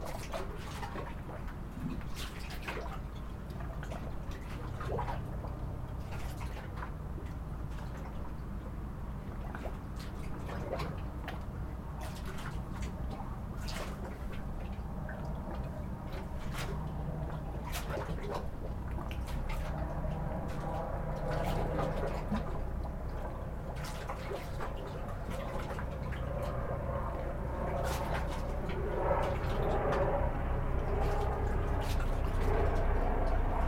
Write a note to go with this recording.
Water bubbling against the wooden pier, distance fog horn and jet plane, port sounds